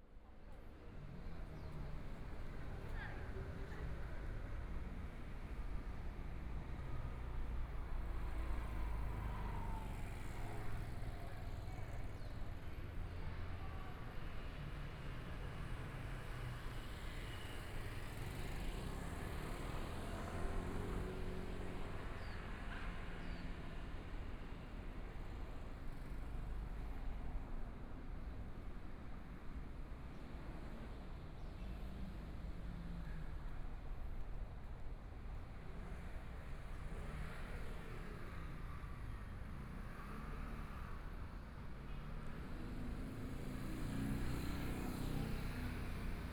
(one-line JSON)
{"title": "Minsheng W. Rd.Zhongshan Dist. - Walking along the MRT route", "date": "2014-01-20 16:50:00", "description": "Walking along the MRT route, Walking in the streets, Traffic Sound, Motorcycle sound, Binaural recordings, Zoom H4n+ Soundman OKM II", "latitude": "25.06", "longitude": "121.52", "timezone": "Asia/Taipei"}